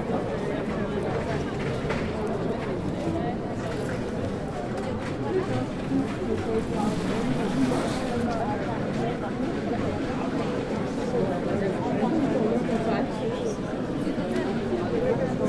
Prague Castle, Changing of the guard
standing by the gate during the ceremony.
10 June 2011